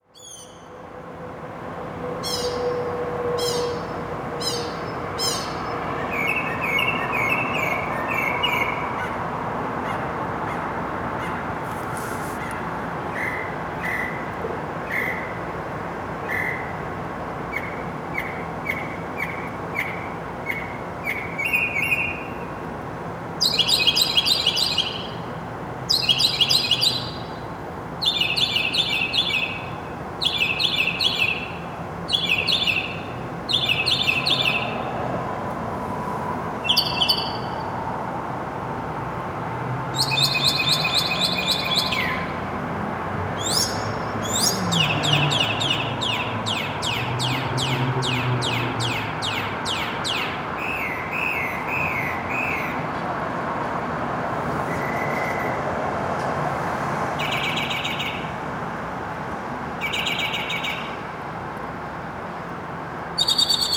Solo Mockingbird Between Buildings, Neartown/ Montrose, Houston, TX, USA - Mockingbird Outside Susan's Apartment
Still at it after 4 a.m., we decided to record him sans background music. These birds are always loud but this one was particularly close and sandwiched between some brick buildings, causing a short snap-back effect.
Sony PCM D50
Harris County, Texas, United States of America, 6 April, 04:15